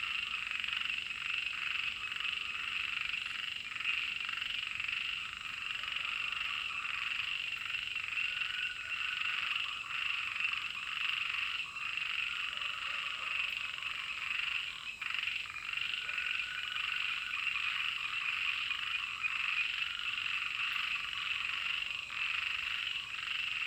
水上巷, 埔里鎮桃米里, Taiwan - Frogs chirping
Frogs chirping
Zoom H2n MS+XY
Puli Township, 水上巷28號, 7 June, 19:26